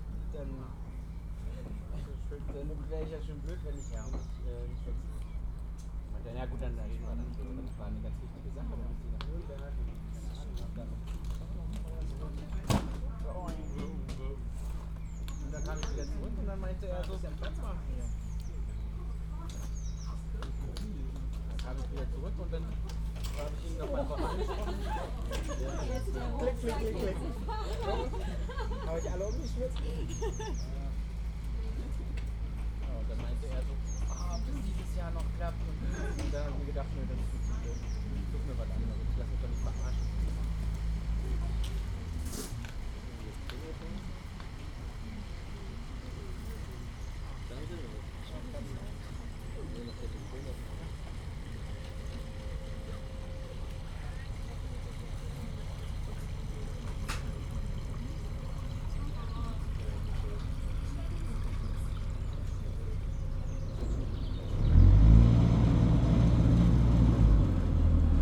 Plänterwald, Berlin - BVG ferry boat, jetty, people waiting
people waiting at jetty, for public transport ferry boat over river Spree.
(tech note: SD702 DPA4060)
22 April 2012, ~16:00